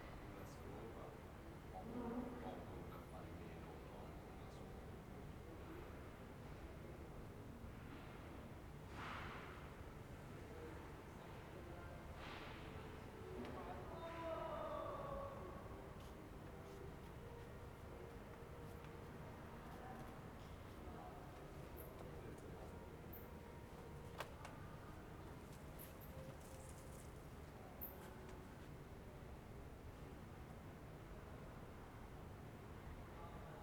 "Night with Shruti box in background in the time of COVID19" Soundscape
Chapter LXXIV of Ascolto il tuo cuore, città. I listen to your heart, city
Tuesday May 12th 2020. Fixed position on an internal terrace at San Salvario district Turin, fifty two days after emergency disposition due to the epidemic of COVID19.
Start at 10:43 p.m. end at 11:07 p.m. duration of recording 23’52”
Piemonte, Italia